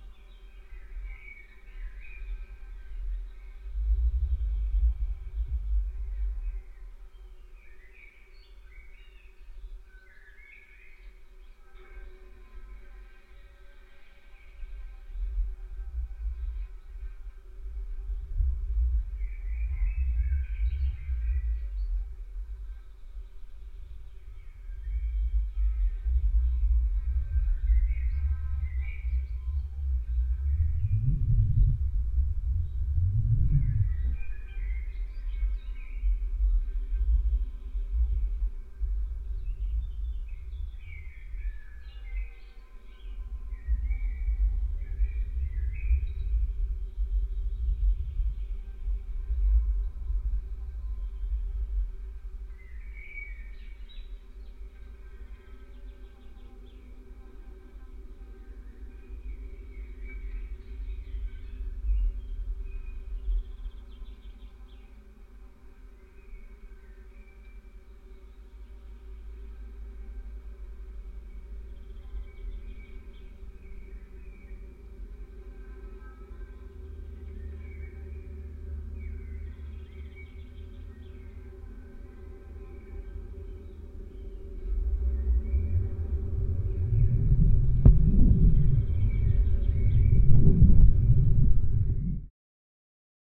Kriaunos., Lithuania, observation tower
contact microphones on the metalic construction of 36 meters observation tower
5 June 2016, 15:15